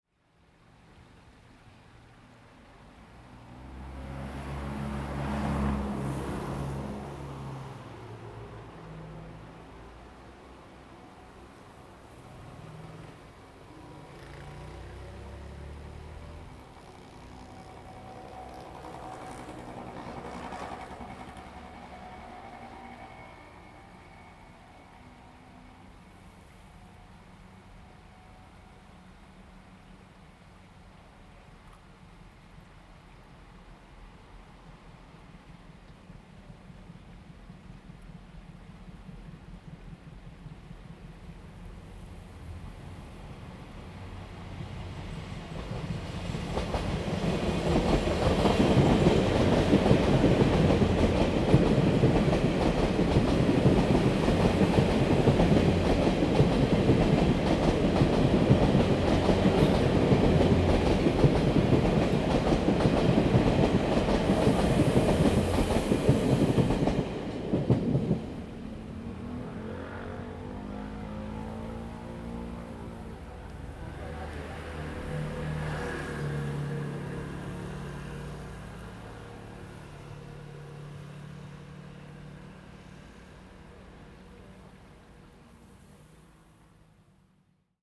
Traffic Sound, Train traveling through the sound, Beside the railway tracks
Zoom H2n MS+XY
Yuli Township, Hualien County, Taiwan, October 2014